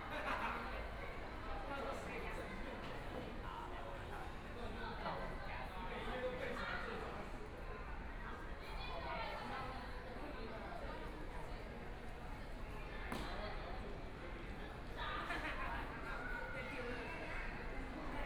In the station lobby, Binaural recordings, Zoom H4n+ Soundman OKM II
1 February, 18:00